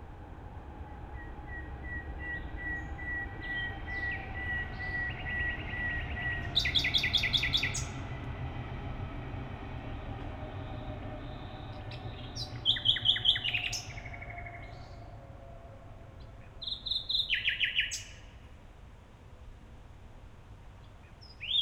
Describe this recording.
Berlin, Mauerweg, two nightingales singing, S-Bahn trains passing-by occasionally, this recording is closer to the second nightingale, (SD702, AT BP4025)